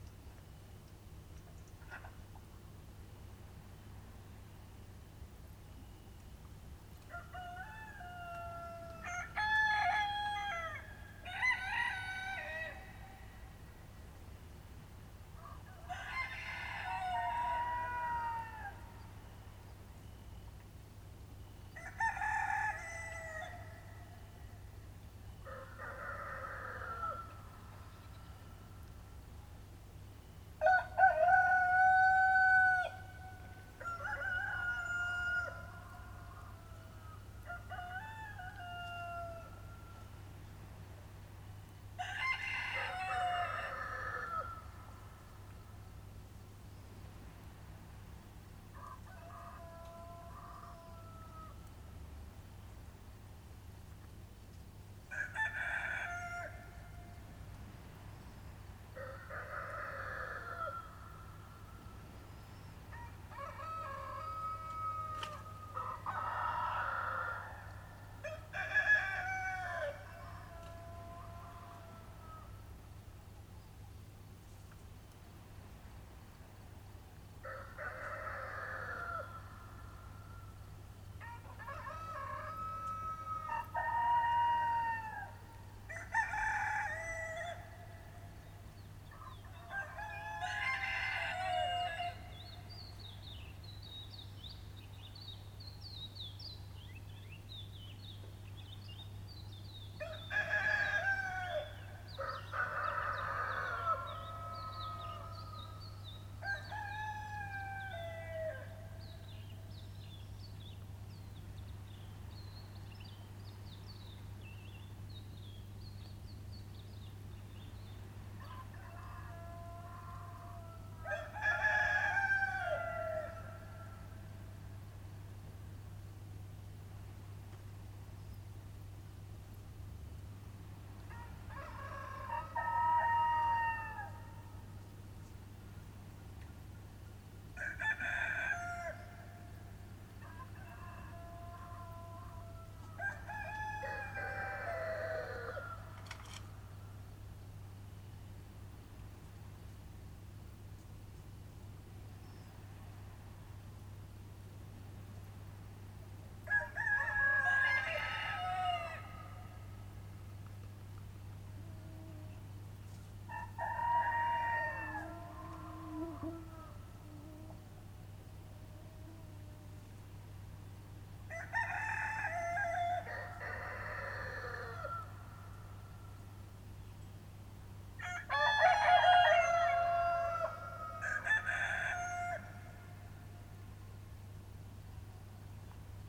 Patmos, Vagia, Griechenland - Morgenstimmung
Morgenstimmung in einem Talkessel, offen gegen das Meer.
Juni 2002 05:00 Uhr